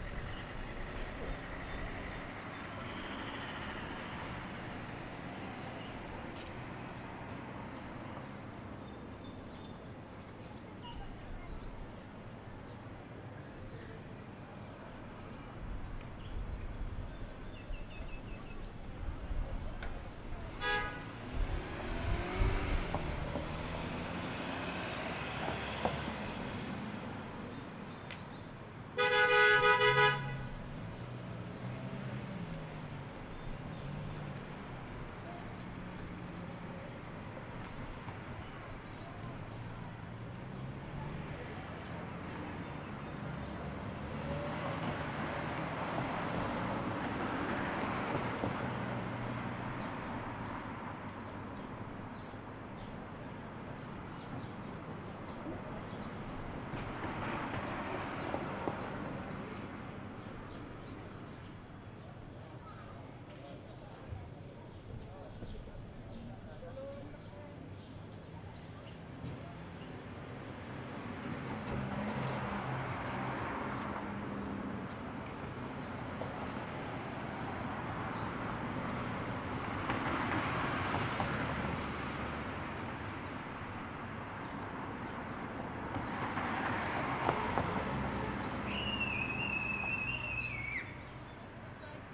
Tel Aviv-Yafo, Israel - Main street around 12pm
Street, Car pass, Murmur, Birds
25 March 2016, ~12:00